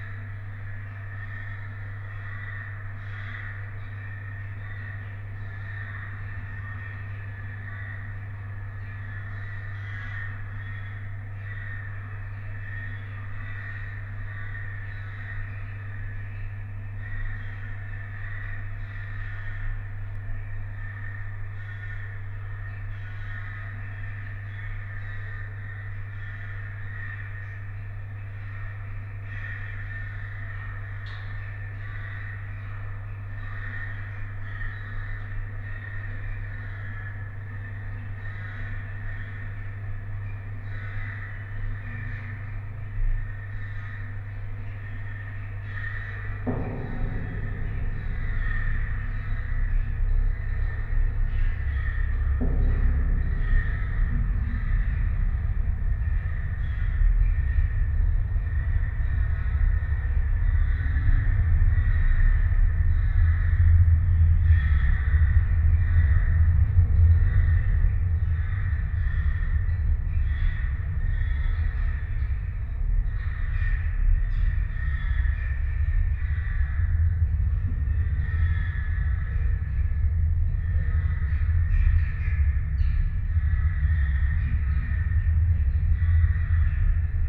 Utena, Lithuania, metalic fence
contact microphones on metalic fence surrounding construction zone. almost windless evening. litle pine forest with hundreds of crows is near. on the other side - a street and it's transport drone
27 March, 18:10